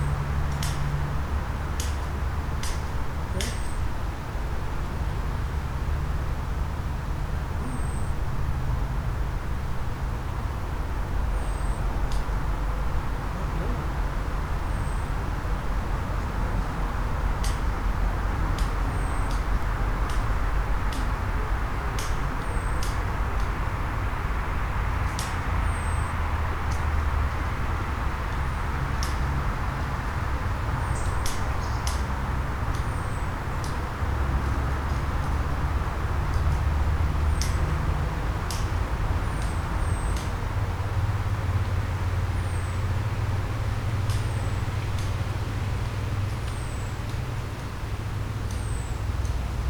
burg/wupper, burger höhe: evangelischer friedhof - the city, the country & me: protestant cemetery
birds, traffic noise of L 407, man cutting bushes
the city, the country & me: july 23, 2012